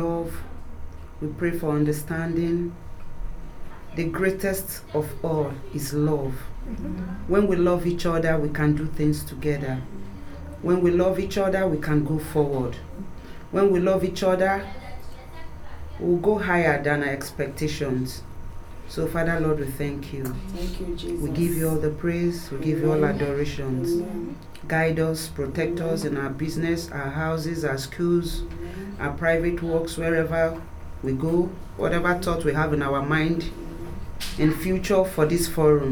FUgE, Hamm, Germany - Give thanks for a successful meeting....
We are with many women in the small upstairs meeting room at FUgE. Yes-Afrika e.V. invited for its first Women’s Forum. The event was organized by Yes-Afrika members Yvonne, Glory and Claudia. We celebrated the day in a full house, with lively participation of women from the community, and our table of refreshment was overflowing with food all the women had brought along to share. The idea of the event was to introduce Yes-Afrika, and ourselves as women members of the club, to whom the women from the community can come with their questions, ideas, needs and projects. We made audio recordings of our opening and closing prayers and songs, and of the feedback from the women…
Find all recordings from the event here: